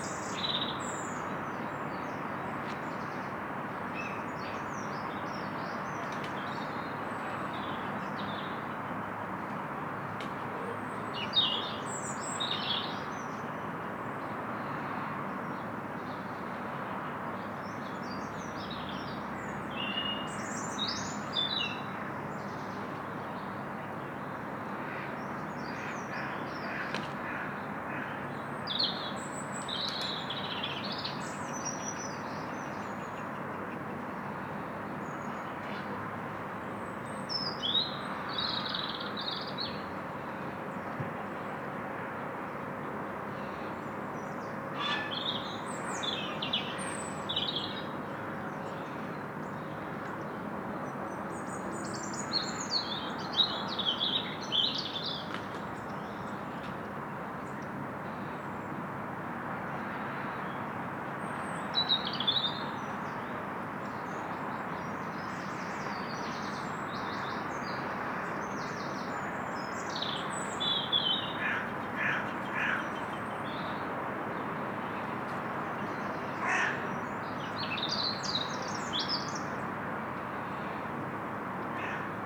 Love Ln, Penryn, UK - Raven and early morning sounds in the Graveyard in Love Lane
A recording made across the valley from the main road into Falmouth from Penryn, so hence road noise in the background but recorded in a graveyard that led down to the estuary. There are the sounds of some captive geese and hens along with Wrens, Robins Rooks and rather nicely a Raven, who came and sat in a tree just to the left and above my microphones. Sony M10 with two Sennheiser ME62 Omni mics with an Olsen Wing.